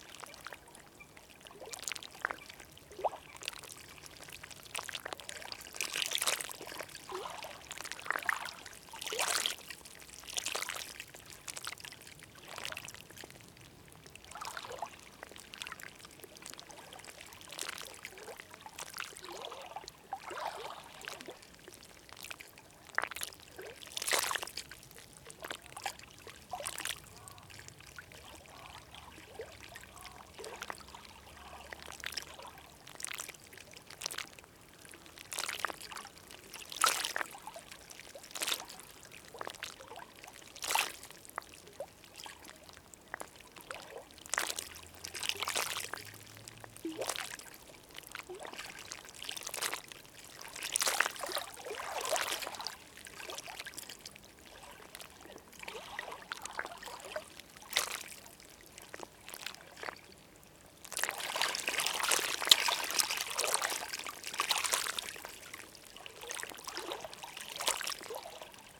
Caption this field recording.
Vaguelettes dans un tapis de roseaux brisés. Enregistreur Teac Tascam DAP1 extrait d'un CDR consacré aux vagues du lac du Bourget, Allures de vagues.